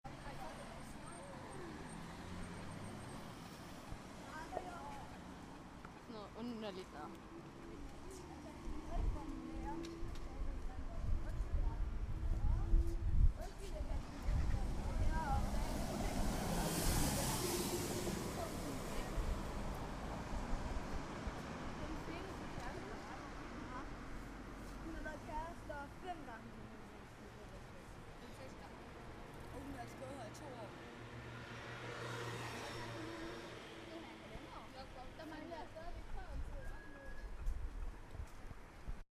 Kids talking. Recorded during the workshop Urban/Sound/Interfaces
Aarhus, Denmark, 24 September 2010